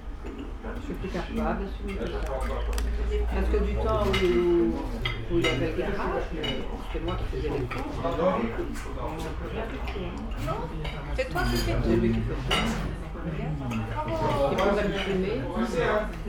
Inner field recordings when its too cold outside :)
PCM-M10, internal microphones.
Schaerbeek, Belgium, 3 February 2012, ~15:00